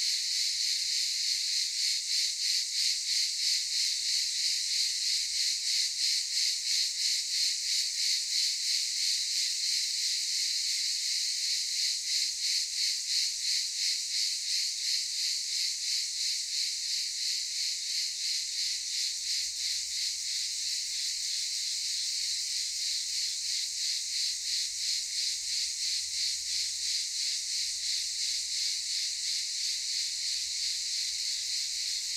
Ulupınar Mahallesi, Çıralı Yolu, Kemer/Antalya, Turkey - Cicadas daytime
Aylak Yaşam Camp, cicadas in daytime